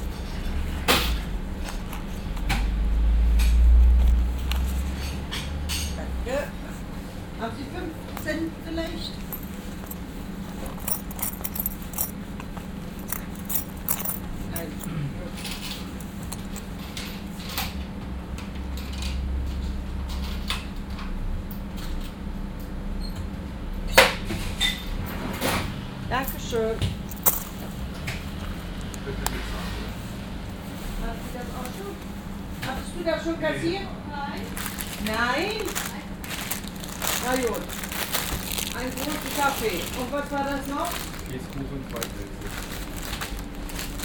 cologne, griechenpforte, bäckerei
in einer bäckerei mittags, verkäuferin und tütenrascheln
soundmap nrw - social ambiences - sound in public spaces - in & outdoor nearfield recordings